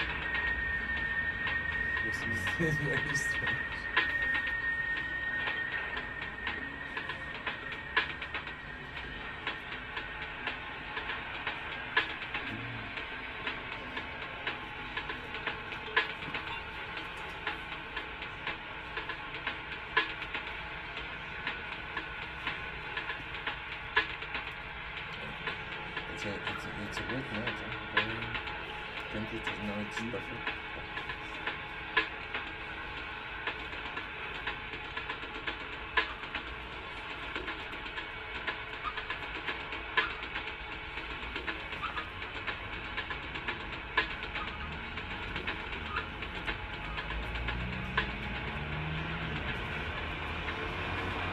Lisboa, RadiaLX radio festival - fragment #2
same on the balcony
Lisbon, Portugal, 1 July